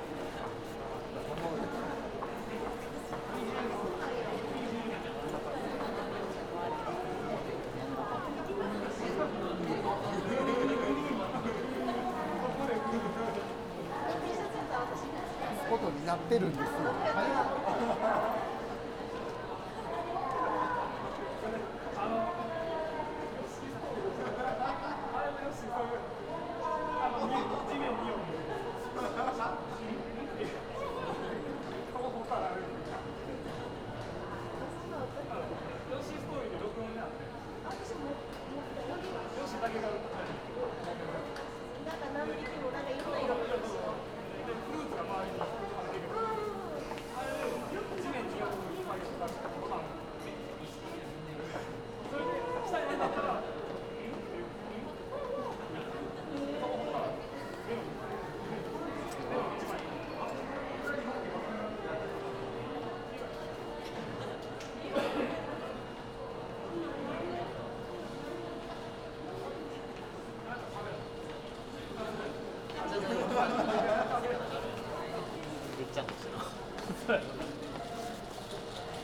Osaka, Morinomiya subway station, platform - passengers gathering at the platform
cheerful conversations and machine whine. train arriving shortly. some announcements are made in female voice some in male. then convey different kind of information so it's easier to pick up the information you are looking for. train announced by a chime bell sound.